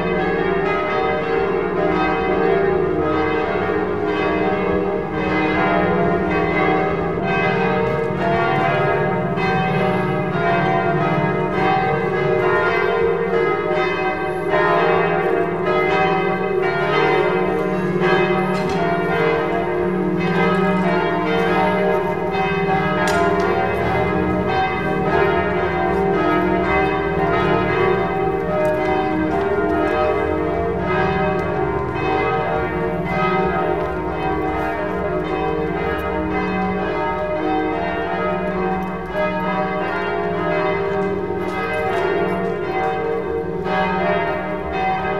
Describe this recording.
the sunday bells of the church recorded from outside - in the background some traffic and approaching people, international cityscapes - topographic field recordings and social ambiences